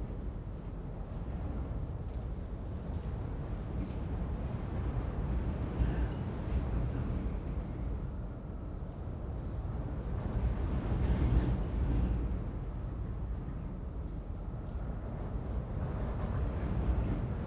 wind heard within a abandoned workshop bulding at night
Trieste, Italy, 10 September 2013